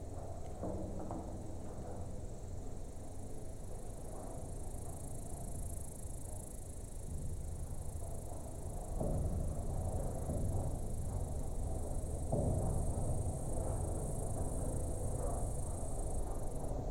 Adomiskis, Lithuania, watertower
another abandoned watertower from soviet kolchoz times...geopphone on the body of metallic tower and omni mics for ambience
Utenos apskritis, Lietuva, 26 August